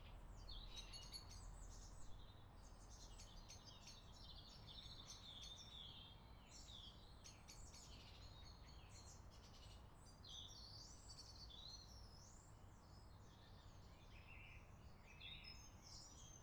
Chesterton High Street, Cambridge, Cambridgeshire, UK - Winter morning birds
Recorded in a back garden before sunrise.
5 January 2013, 07:30